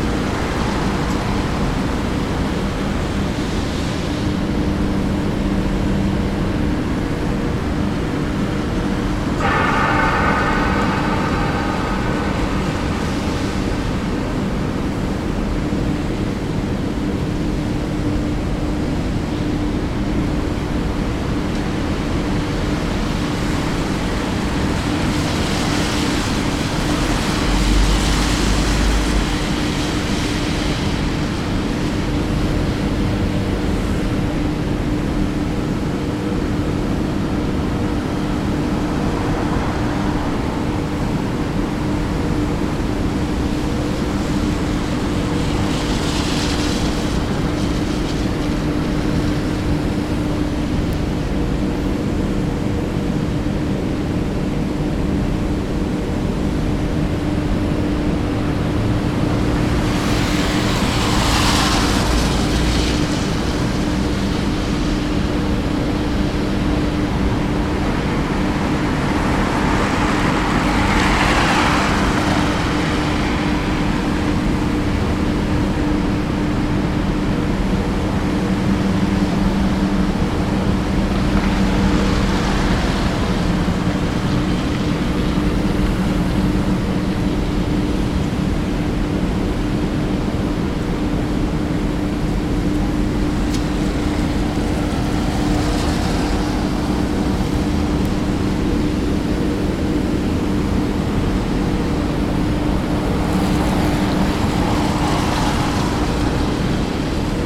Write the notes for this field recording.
Standing at the Dock on a rainy night